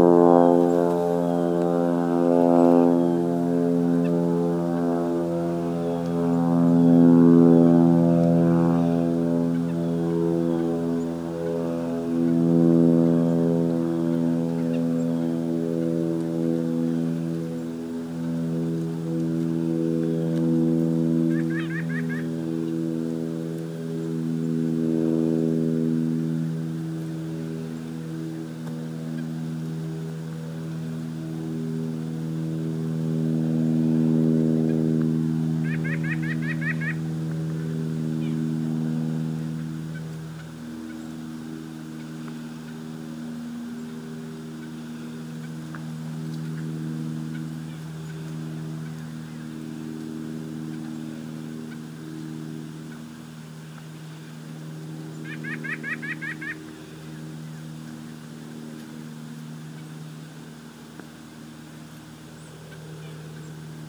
Vassar College, Raymond Avenue, Poughkeepsie, NY, USA - Vassar Farm 1:15 p.m., 2-22-15, sunny afternoon after fresh snowfall
Vassar Farm, snowshoing on a sunny afternoon after fresh snowfall: Plane Birds Cars Voices